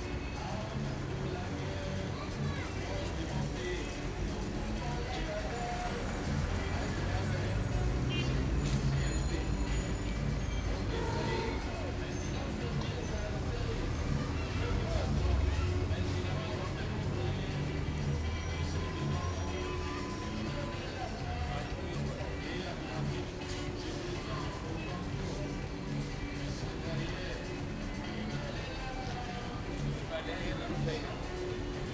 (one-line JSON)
{"title": "bal poussiere, abidjan 1988", "description": "enregistré sur le tournage de bal poussiere dhenri duparc", "latitude": "5.35", "longitude": "-4.00", "altitude": "38", "timezone": "Europe/Berlin"}